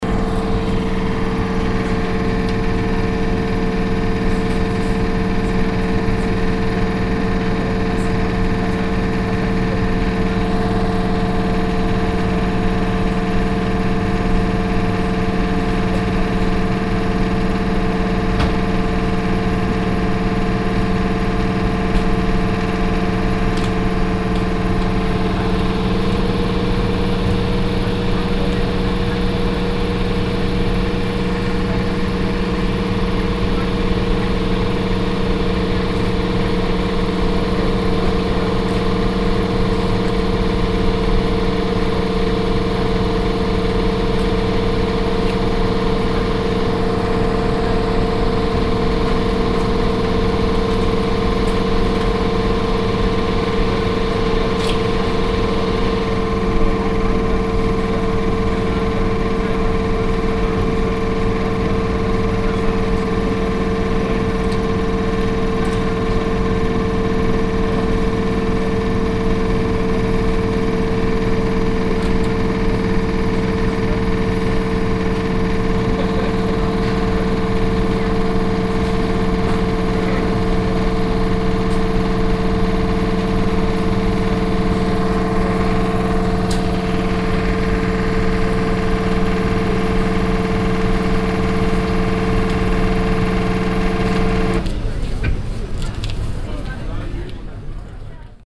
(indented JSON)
{
  "title": "essen, flachsmarkt, generator",
  "date": "2011-06-09 11:20:00",
  "description": "Der Klang eines Generators der Strom für die Marktstände produziert.\nThe sound of a generator that generates electricity for the refrigerators of the market sellers.\nProjekt - Stadtklang//: Hörorte - topographic field recordings and social ambiences",
  "latitude": "51.46",
  "longitude": "7.01",
  "altitude": "83",
  "timezone": "Europe/Berlin"
}